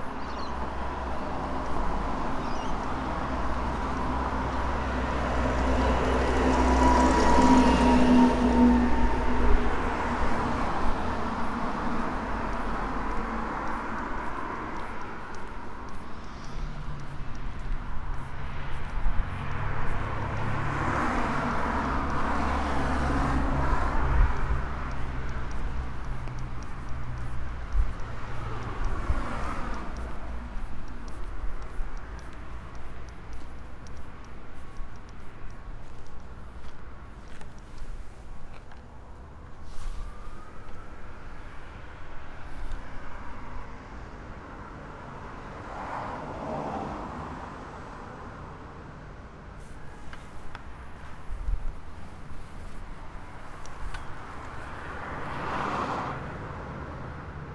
Sandridge, Port Melbourne VIC, Australia - Academy of Design, sounds outside
A recording taken outside of the Academy of Design--an institution concerned with developing visual communication skills that's surrounded by sound.
18 July 2013, ~10:00